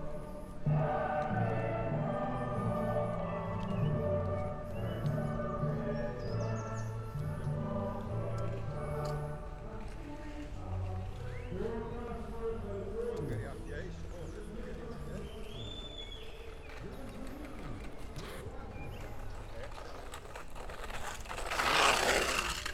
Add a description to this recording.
Small soundwalk recording, can hear bikes, people talking and a mobile masse being transmitted outsite with Mozarts requiem as soundtrack. Recorded in bagpack situation (AB stereo config) with a pair of 172 primo capsules into a SD mixpre6.